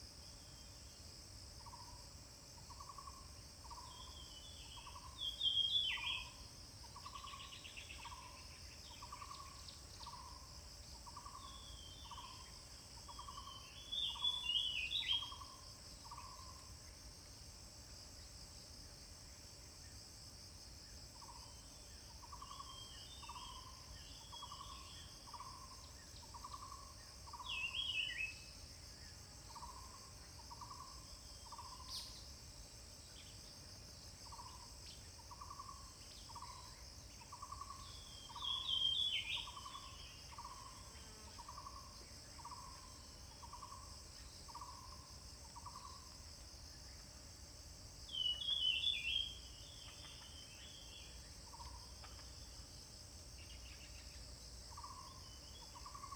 Pasture Yen Family, 埔里鎮桃米里 - Birds singing
Birds singing
Zoom H2n MS+XY
Puli Township, 水上巷28號, 28 April 2016, 07:38